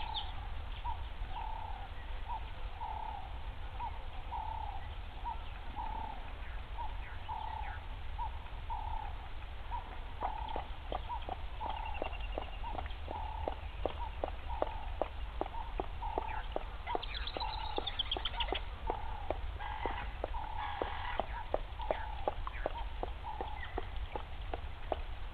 Balule Private Game Reserve - Leopard Drinking
Rosies Pan. Leopard growls (36sec), drinks (1m35sec) and growls again (3min10sec). Squirrels.